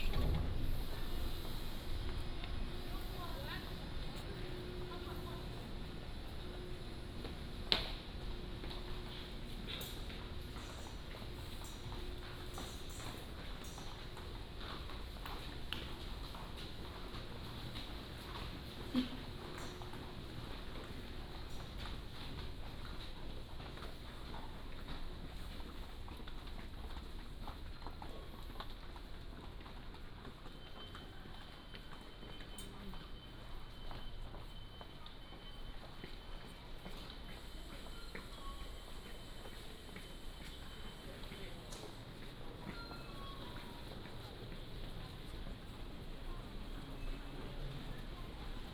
員林車站, Yuanlin City - Walking at the station
From the station platform to walk outside